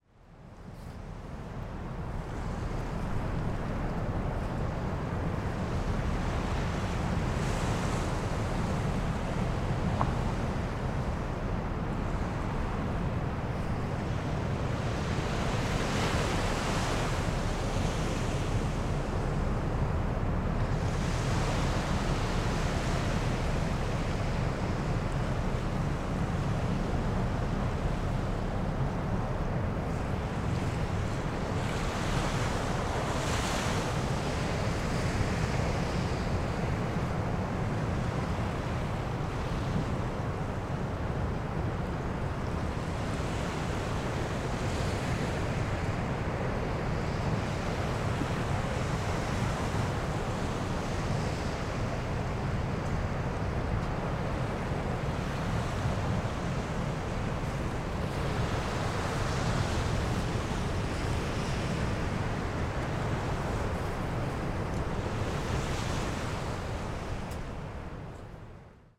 Ploumanach beach - Ploumanac'h beach at Night
La plage de Ploumanac'h la nuit, la vagues sont douces ici mais plus loin la mer est agitée.
Ploumanach beach at Night, soft waves and heavy rumble from the sea & wind.
/Oktava mk012 ORTF & SD mixpre & Zoom h4n
Perros-Guirec, France